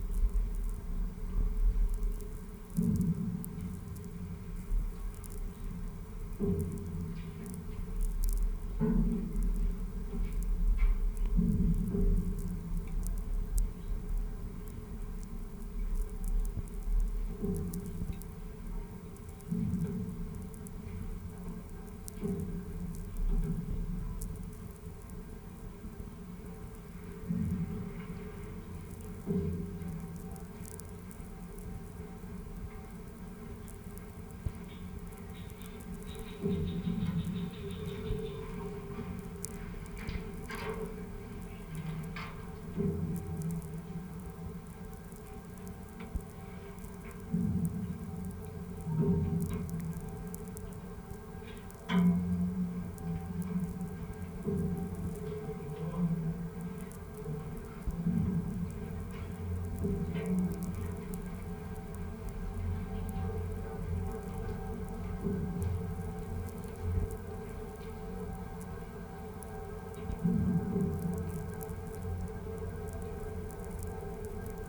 Anykščiai, Lithuania, railway bridge unheard
sound exploration of old railway bridge: contact microphones and electromagnetic antenna